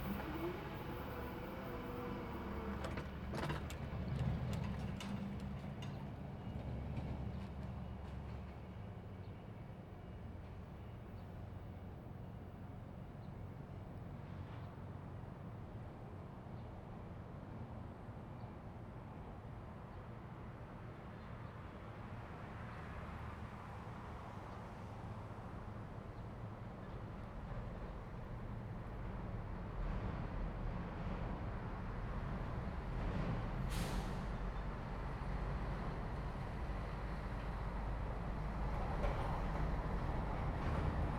龍津路, Houlong Township - Railroad Crossing
Railroad Crossing, The train runs through, Next to the tracks, Traffic sound, Binaural recordings, Zoom H2n MS+XY +Spatial audio
Miaoli County, Houlong Township, 舊後汶公路118-2號, 19 September